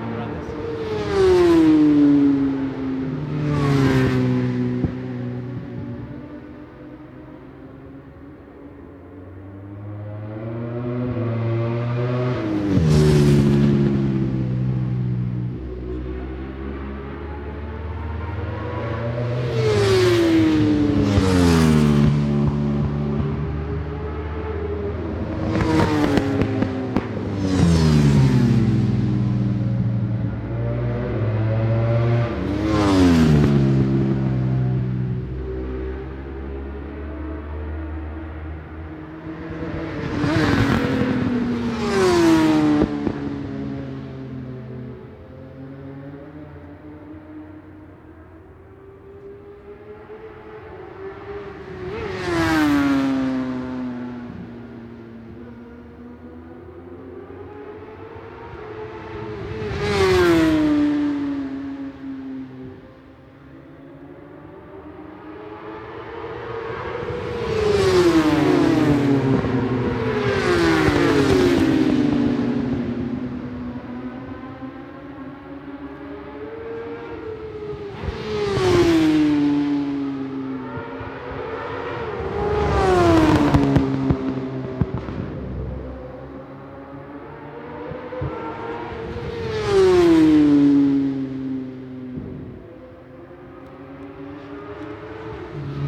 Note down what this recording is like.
british superbikes 2004 ... superbikes qualifying two ... one point stereo mic to minidisk ...